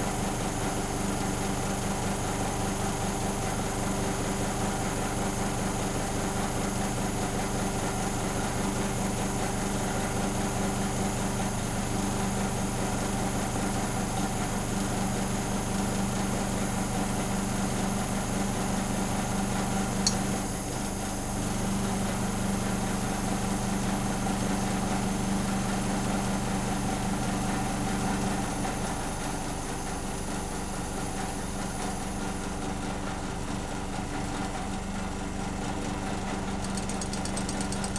{"title": "Binckhorst, Laak, The Netherlands - Airco fan mechanical noises", "date": "2012-03-13 17:40:00", "description": "Binckhorst.\nSound of an airco fan goes through changes. Please notice the sudden mechanical \"klang\" noise at 0:56 which sounds like the rattling near the end of the file.\nRecorded with 2 DPA 4060's and an Edirol R-44", "latitude": "52.06", "longitude": "4.34", "altitude": "1", "timezone": "Europe/Amsterdam"}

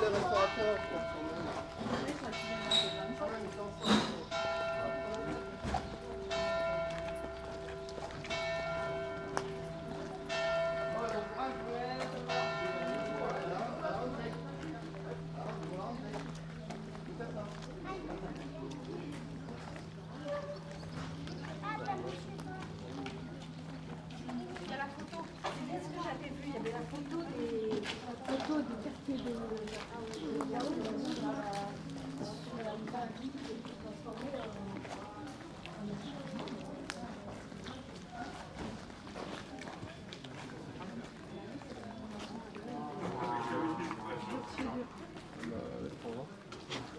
{"title": "Rue Notre Dame, Monpazier, France - Bells at 7pm – Cloches de 19h00.", "date": "2022-08-16 18:58:00", "description": "People walking and talking.\nTech Note : Sony PCM-M10 internal microphones.", "latitude": "44.68", "longitude": "0.89", "altitude": "200", "timezone": "Europe/Paris"}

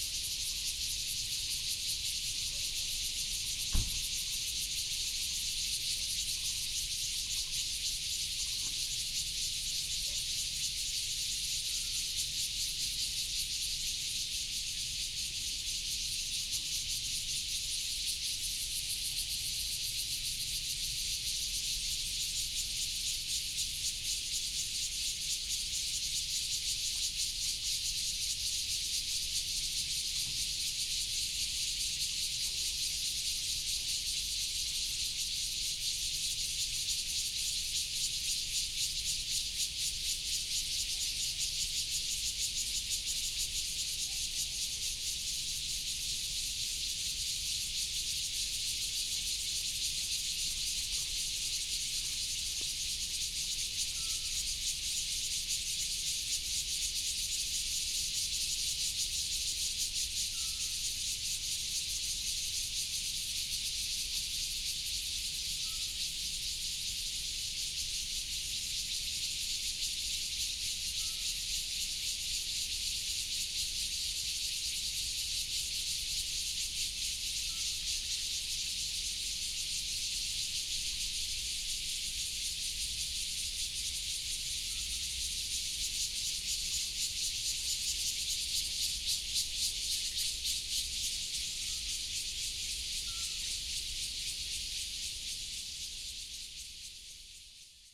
{"title": "Zhaiming Temple, Daxi Dist. - Cicadas and Birds sound", "date": "2017-07-25 08:44:00", "description": "Cicadas and Birds sound, In the square outside the temple", "latitude": "24.89", "longitude": "121.27", "altitude": "185", "timezone": "Asia/Taipei"}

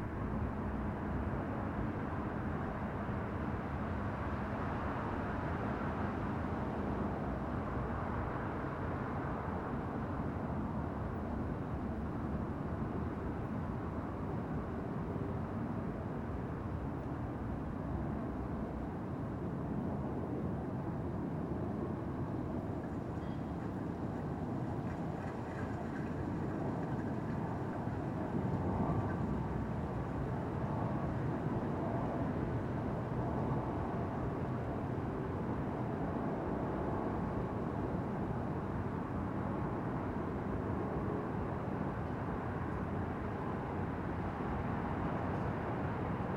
{"title": "Manitiusstraße, Dresden, Germany - Rooftop on a Windy Saturday Night", "date": "2018-08-03 22:45:00", "description": "Recorded with a Zoom H5.\nLight traffic noise, a little bit of party music and an airplane flies over at the end.\nAround 3 minutes the traffic noise suddenly becomes very quiet.", "latitude": "51.06", "longitude": "13.72", "altitude": "115", "timezone": "GMT+1"}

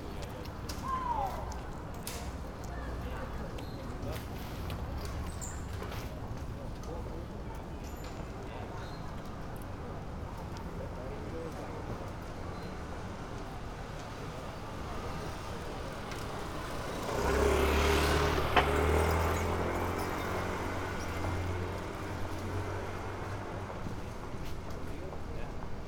{"title": "Tokio, Arakawa, Higashinippori district, near Olympic - bikes crossing streets near convenient store", "date": "2013-03-28 19:06:00", "description": "a living neighborhood of tokyo, many people moving around riding bikes, beautiful ticking all over the place.", "latitude": "35.73", "longitude": "139.79", "altitude": "11", "timezone": "Asia/Tokyo"}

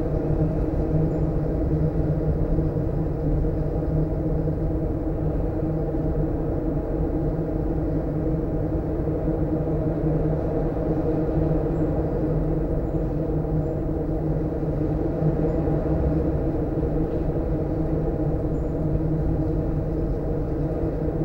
{"title": "Cerro Sombrero, Región de Magallanes y de la Antártica Chilena, Chile - storm log - water pipe inside old swimming pool", "date": "2019-03-04 11:37:00", "description": "water pipe inside old swimming pool, wind (outside) SW 19 km/h\nCerro Sombrero was founded in 1958 as a residential and services centre for the national Petroleum Company (ENAP) in Tierra del Fuego.", "latitude": "-52.78", "longitude": "-69.29", "altitude": "64", "timezone": "America/Punta_Arenas"}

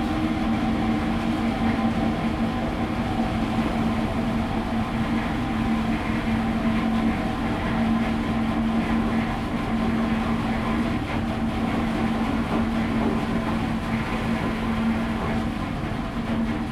{"title": "興港北街, Magong City - Ice making factory", "date": "2014-10-23 08:59:00", "description": "In the fishing port, Ice making factory, Ice delivery to large fishing vessels\nZoom H2n MS+XY", "latitude": "23.57", "longitude": "119.57", "altitude": "7", "timezone": "Asia/Taipei"}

